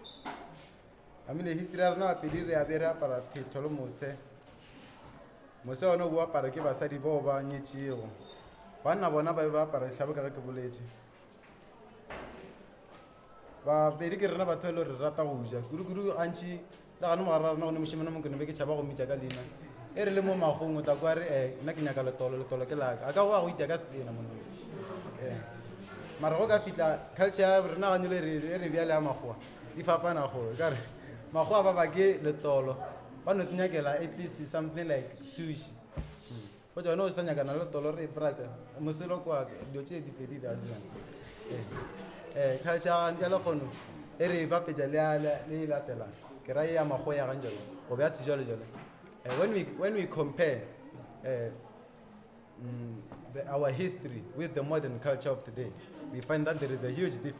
Mphahlele, South Africa - Kgagatlou Secondary School
Keleketla! Library workshop for publication at Kgagatlou Secondary school in Ga-Mphahlele. The purpose of the workshop was towards developing new content for our second publication 58 Years To The Treason Trial.